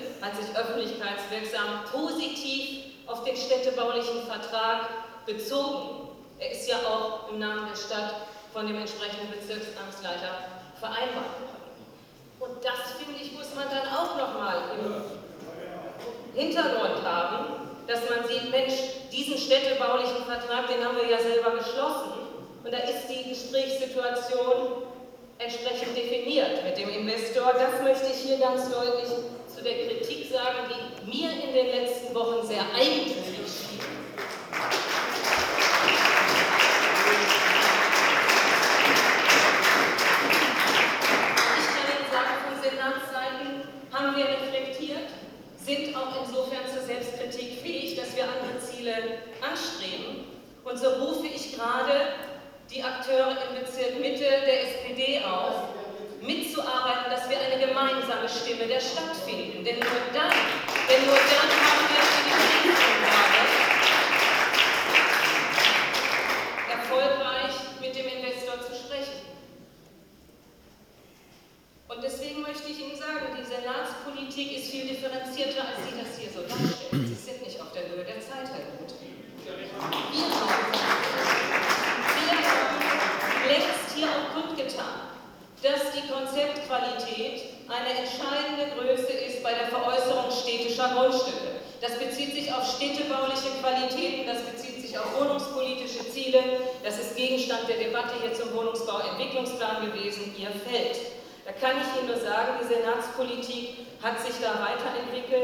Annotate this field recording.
THEMEN DER AKTUELLEN STUNDE, 1. Wer gegen wen? Kultur - Kommerz – Stadtentwicklung (GAL), 2. Schwarz-grüne Haushaltsakrobatik - statt klarer Informationen planloser, Aktionismus (DIE LINKE), 3. Für ganz Hamburg - stadtverträgliche Entwicklung des Gängeviertels (CDU), 4. Gängeviertel - Stadtentwicklungspolitik nach dem Motto „Alles muss raus“ (SPD)